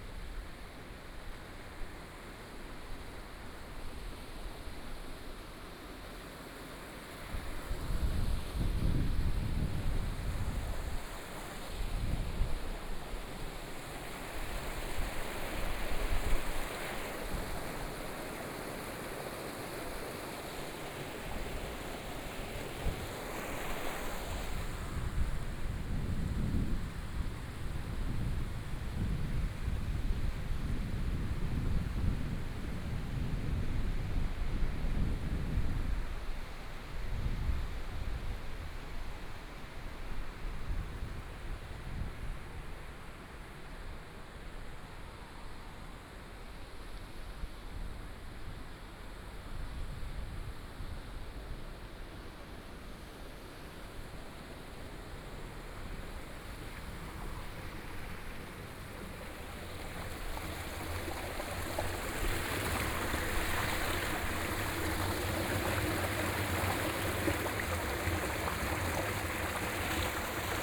Guanxi Township, Taiwan - the sound of water
Walking along the river side, Walking from the downstream to the upstream direction, The sound of water, Binaural recording, Zoom H6+ Soundman OKM II